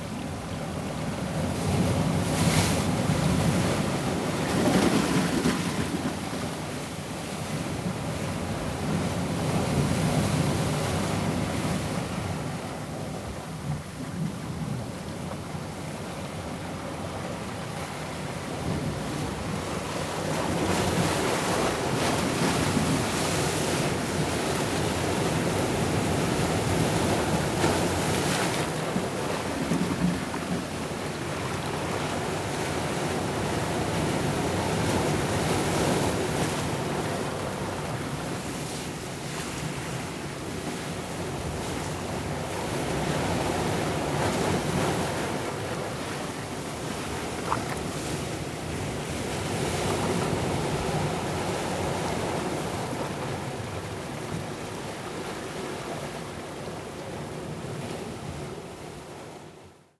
heavy duty waves splashing among rocks, squeezing into a narrow passage.
Porto, Portugal, 30 September 2013, 14:51